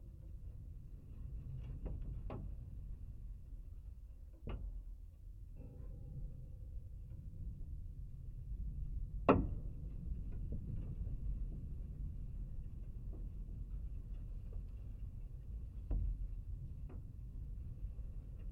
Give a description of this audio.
The Research Station has a metal chain-link fence to keep out activists and protesters. The fence is covered by CCTV. The sun was hot making the metal expand and contract. Stereo pair Jez Riley French contact microphones + SoundDevicesMixPre3